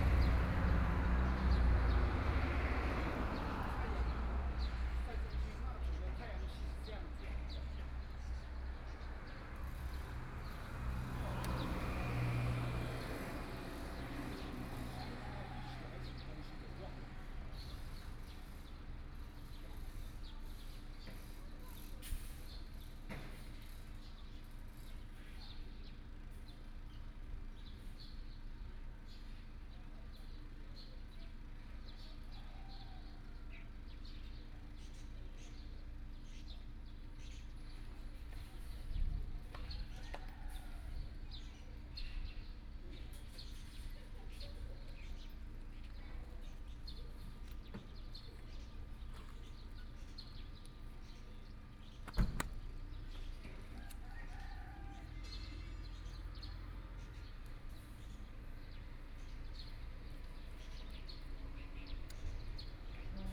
永鎮廟, 壯圍鄉永鎮村 - In front of the temple
In front of the temple, Birdsong sound, Small village, Traffic Sound
Sony PCM D50+ Soundman OKM II